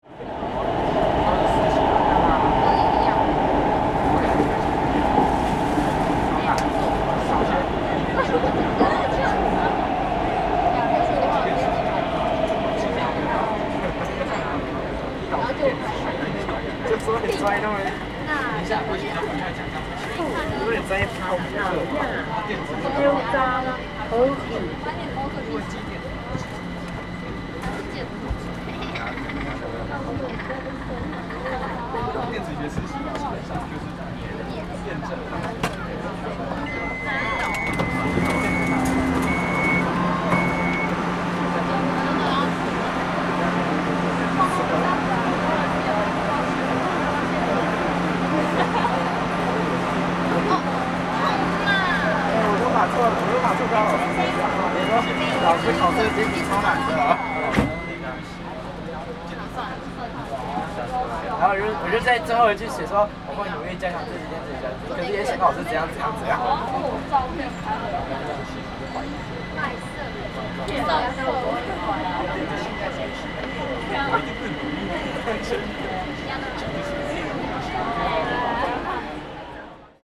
{"title": "Houyi, Kaohsiung - inside the Trains", "date": "2012-02-25 16:22:00", "description": "inside the Trains, Sony ECM-MS907, Sony Hi-MD MZ-RH1", "latitude": "22.64", "longitude": "120.30", "altitude": "12", "timezone": "Asia/Taipei"}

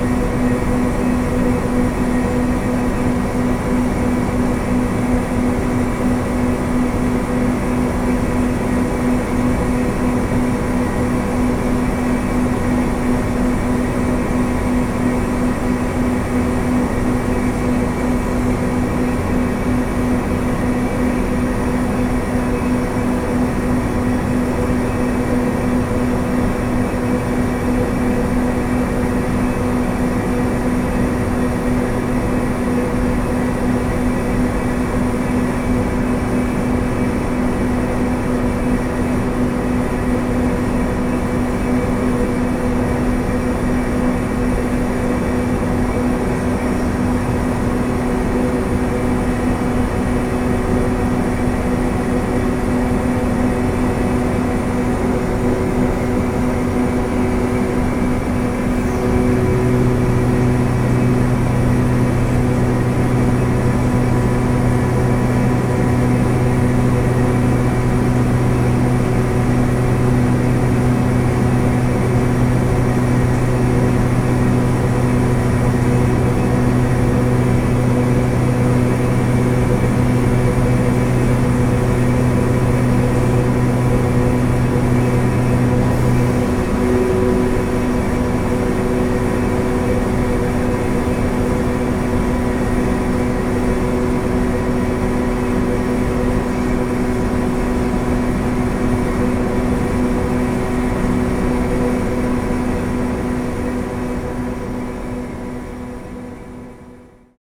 {"title": "Tezno, Maribor, Slovenia - factory ventilation", "date": "2012-06-16 17:10:00", "description": "en exit for a series of ventilation shaft at the side of the factory provided an intense slowly changing drone.", "latitude": "46.53", "longitude": "15.67", "altitude": "275", "timezone": "Europe/Ljubljana"}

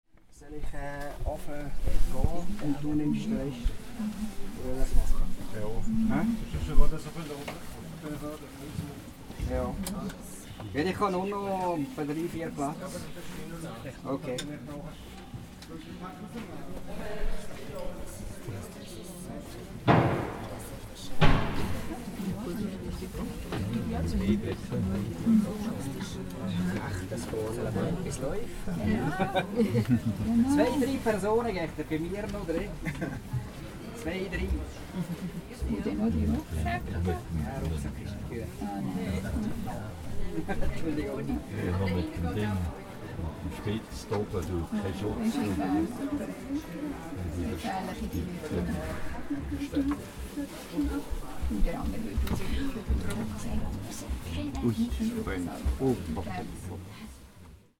{"title": "Walliser Busfahrer", "date": "2011-07-09 14:30:00", "description": "Er kennt alle Sitze und dirigiert die Plätze an alle Wartenden", "latitude": "46.38", "longitude": "7.63", "timezone": "Europe/Zurich"}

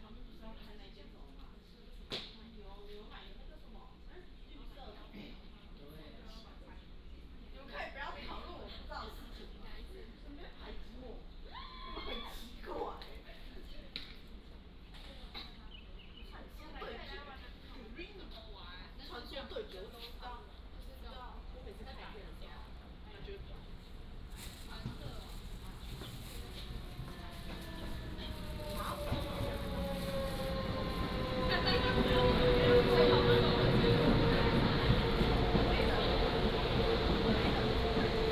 {"title": "Dounan Station, 雲林縣斗南鎮南昌里 - At the station platform", "date": "2017-01-25 12:28:00", "description": "At the station platform, Train arrives and leaves, Station information broadcast", "latitude": "23.67", "longitude": "120.48", "altitude": "38", "timezone": "Asia/Taipei"}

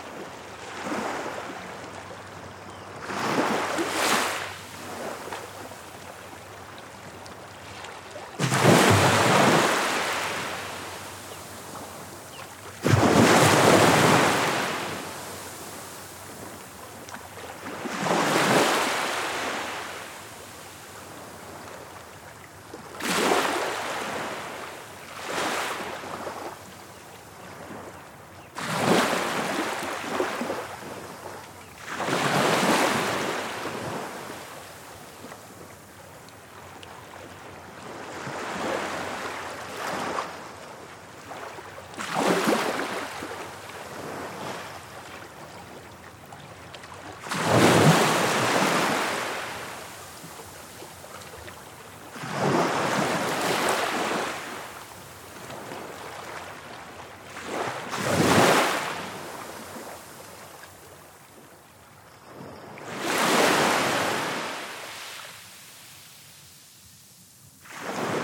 {"title": "Newton-by-the-Sea, Northumberland, UK - Sea Recording at Football Hole", "date": "2014-07-20 16:10:00", "description": "Stereo MS recording of the incoming tide at Football Hole in Northumberland", "latitude": "55.52", "longitude": "-1.62", "altitude": "1", "timezone": "Europe/London"}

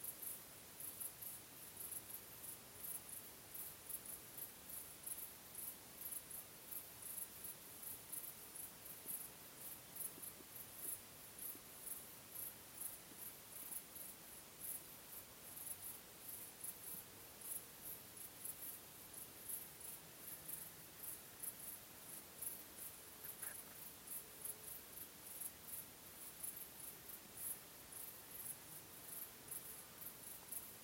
{"title": "summer garden - stereo crickets", "description": "summer night sounds.\nstafsäter recordings.\nrecorded july, 2008.", "latitude": "58.31", "longitude": "15.66", "altitude": "117", "timezone": "GMT+1"}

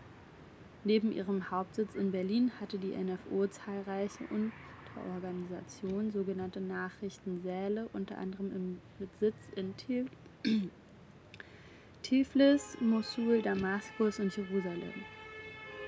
{"title": "Tauentzienstraße, Berlin, Deutschland - Mobile Reading Room N°3 Postkoloniale Stadtgeschichte Berlins", "date": "2018-11-10 18:36:00", "description": "The reading group Decol_IfKiK was distinguished by the fact that they read different narratives in certain places in Berlin, which for many represent an unknown connection with German colonialism. Places, houses, monuments receive new narratives for a more open discourse about our common colonial past.\nat this site, the former News Agency for the Orient (NfO)\nShortly after the outbreak of the First World War in August 1914 was the following November, the founding of the News Office for the Orient (NfO) by the Foreign Office and the Politics Department in the Deputy General Staff. The stumbling block to founding the NfO was a proposal by the diplomat and archaeologist Max von Oppenheim. In order to weaken the enemy forces, especially the British and French, von Oppenheim proposed to stir up insurrection in the British and French colonies of the Near and Middle East.", "latitude": "52.50", "longitude": "13.34", "altitude": "35", "timezone": "Europe/Berlin"}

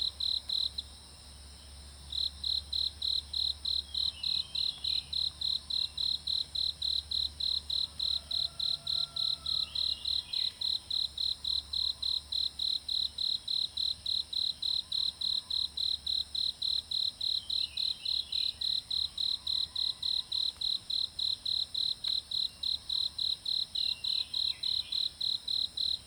{"title": "Shuishang Ln., 桃米里 - In the bush", "date": "2016-06-08 04:57:00", "description": "Early morning, Bird sounds, Insect sounds, In the bush\nZoom H2n MS+XY", "latitude": "23.94", "longitude": "120.92", "altitude": "480", "timezone": "Asia/Taipei"}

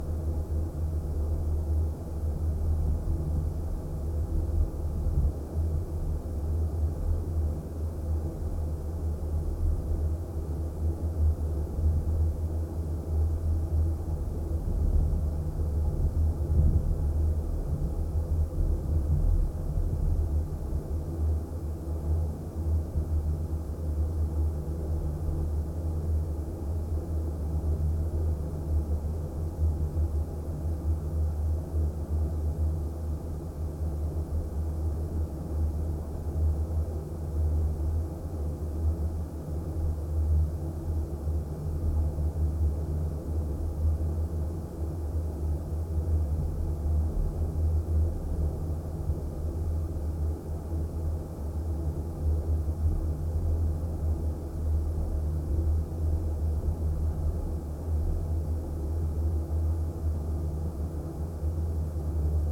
{"title": "Riser Structure, Council Bluff Lake, Missouri, USA - Riser Structure", "date": "2020-11-08 13:54:00", "description": "Recording from contact mic attached to wooden platform of service bridge to riser structure containing gated reservoir drain of Council Bluff Dam. At the time it was completed in 1981 it was the largest earth fill dam ever built by the USDA-Forest Service", "latitude": "37.73", "longitude": "-90.91", "altitude": "333", "timezone": "America/Chicago"}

